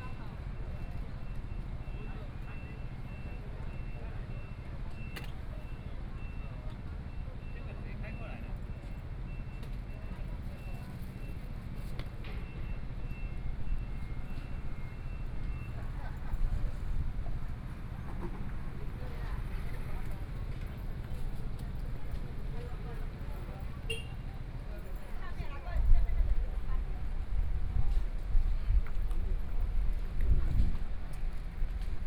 Guolian Rd., Hualien City - Outside the station
Tourist, Traffic Sound, Train sounds, out of the station, Binaural recordings, Zoom H4n+ Soundman OKM II